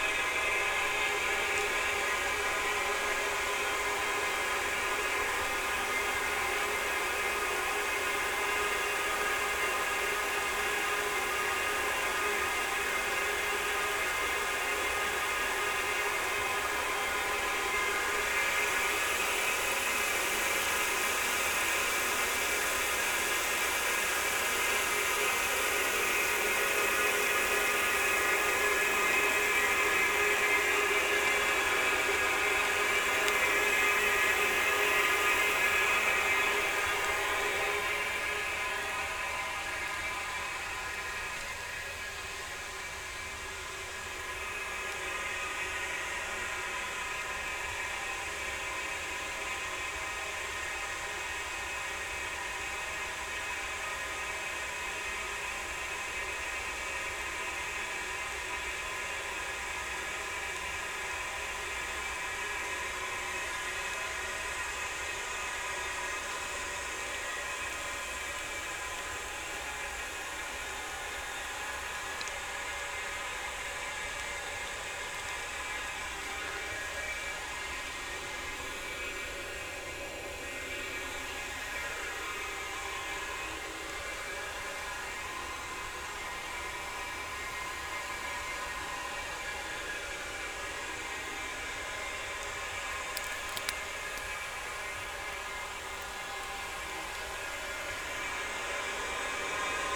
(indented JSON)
{
  "title": "Erkelenz, Pesch, Garzweiler II - watering equipment",
  "date": "2012-04-03 16:20:00",
  "description": "periodic watering of the surface, near village Pesch, at the edge of Garzweiler II coal mining, probably to prevent dust or to compact the soil befor excavating.\n(tech: SD702, Audio Technica BP4025)",
  "latitude": "51.05",
  "longitude": "6.46",
  "altitude": "97",
  "timezone": "Europe/Berlin"
}